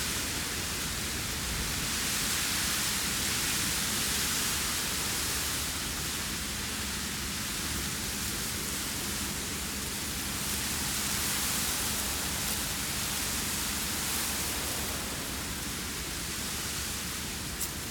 A few plants grow in the debris around the mine edge. Here reeds in an almost dry pool blow in the wind. A vehicle grinds uphill half a kilometer away.
24 August 2012, 13:57